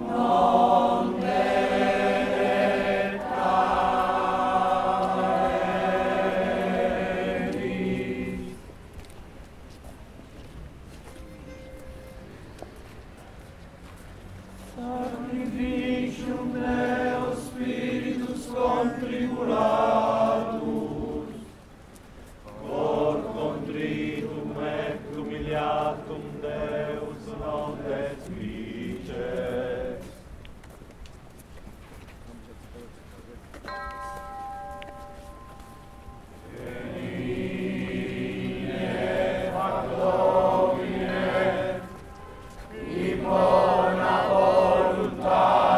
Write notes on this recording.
For Easter (Pasqua in italian), in the little village of Sant' Agnello, near Sorrento, women and men wear red costumes for the first procession in the night, at midnight. They go, singing, from a church to an other church of the village.